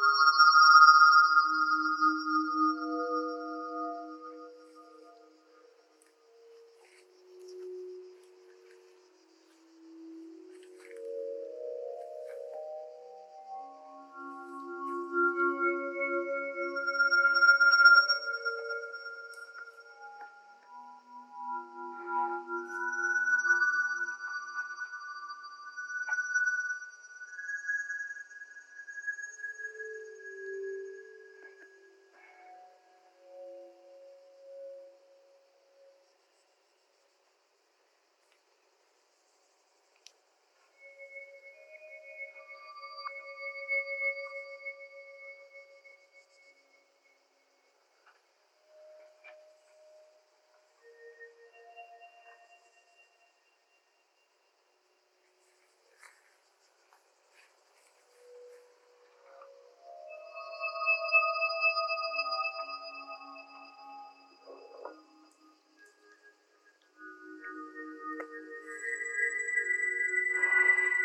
Hlevnik, Dobrovo v Brdih, Slovenia - BIOACOUSTICS SOUNDS OF OLIVES & OLIVE TREE
Bio Acoustics Sound Recordings Of Olive Tree in Hlevnik, Goriska Brda in the hot summer afternoon in July 2020. I recorded the session of Olive Tree Bio Data Recording Signals.
Ableton Live Software
BioData Recording Device
Slovenija, July 2020